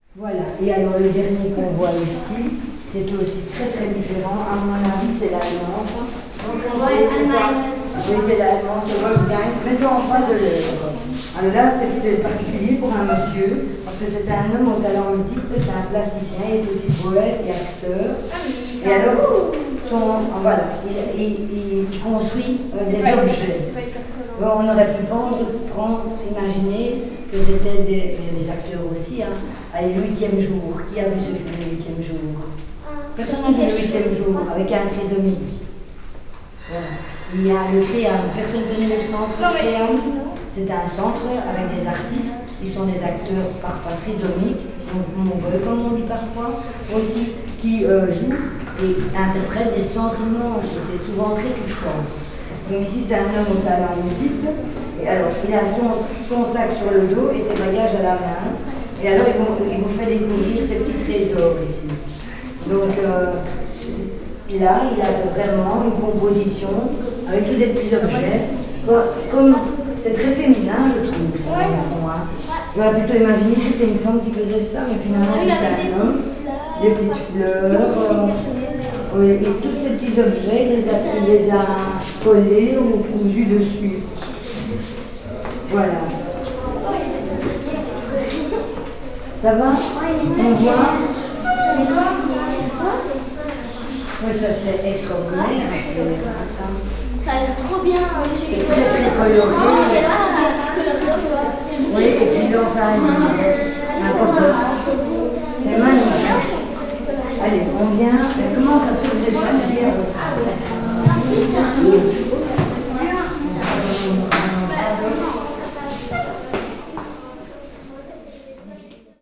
Brussels, Rue Haute, Art & Marges Museum